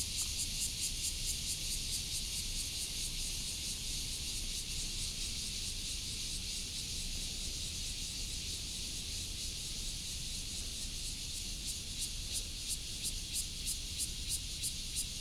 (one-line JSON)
{"title": "汴洲公園, Taoyuan Dist. - in the park", "date": "2017-07-27 06:50:00", "description": "in the park, Cicada cry, Face funeral home, traffic sound", "latitude": "25.02", "longitude": "121.32", "altitude": "118", "timezone": "Asia/Taipei"}